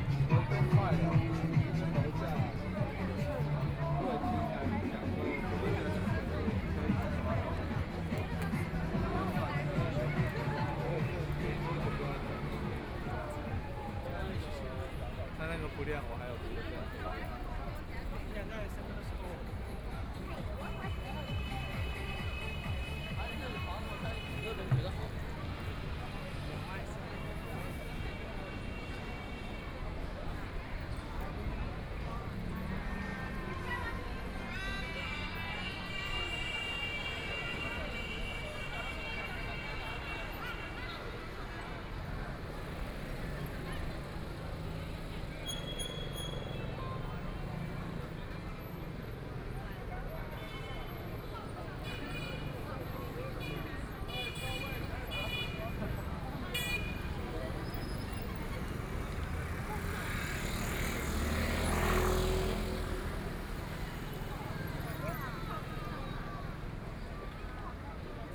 east Nanjing Road, Shanghai - Walking on the road
Walking on the road, walking in the Business Store hiking area, Very many people and tourists, Binaural recording, Zoom H6+ Soundman OKM II
Shanghai, China, 23 November 2013